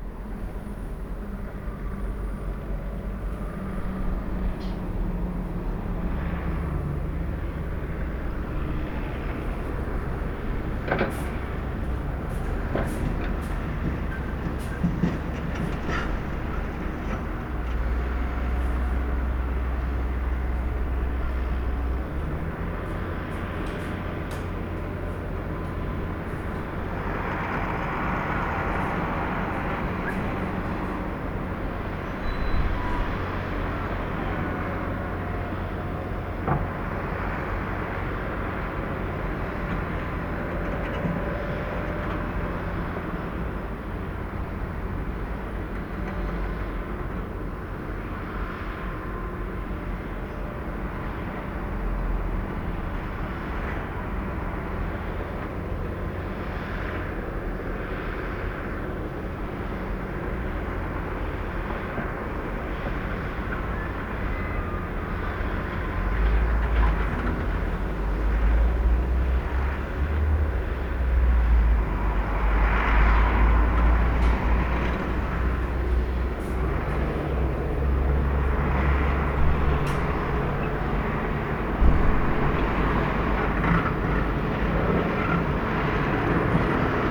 Tsentralnyy rayon, Woronesch, Oblast Woronesch, Russland - Ul. Shishkowa at night
recorded from the window of a panel flat. Construction going on
Voronezh, Voronezh Oblast, Russia, 6 June, ~02:00